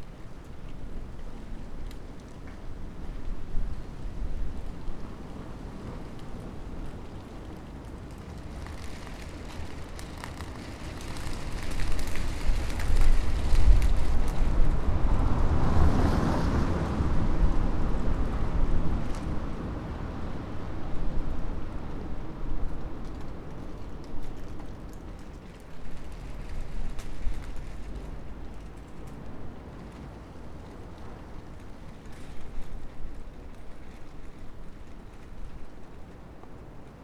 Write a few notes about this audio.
windy night, small flags of a nearby toyshop fluttering in the wind, someone warming up the motor of his car, taxis, passers by, the city, the country & me: february 1, 2013